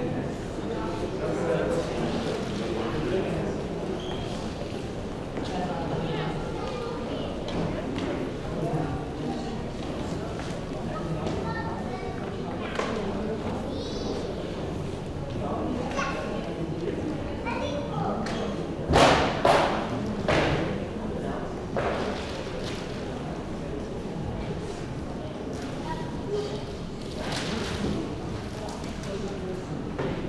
Aalst, België - Utopia library
The great and beautiful Utopia library. It is intended as a meeting place, so silence is not required.